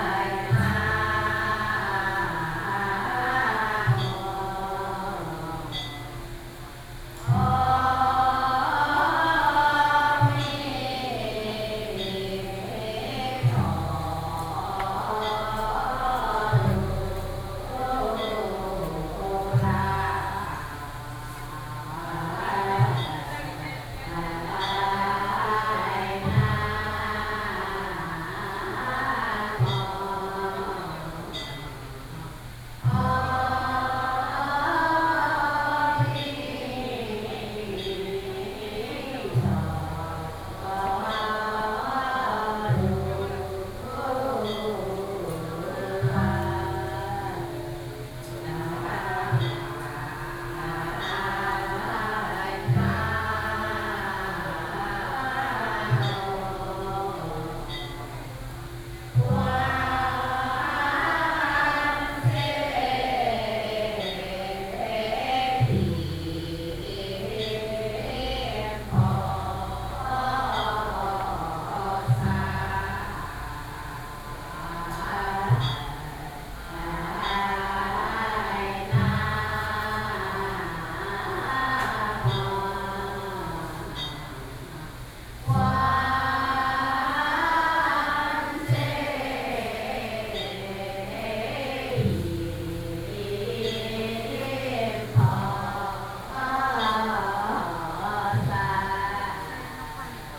Mengjia Longshan Temple, Taipei City - chanting
walking around in the Temple, hundreds of old woman are sitting in the temple chanting together, Sony PCM D50 + Soundman OKM II
萬華區 (Wanhua District), 台北市 (Taipei City), 中華民國, 25 May 2013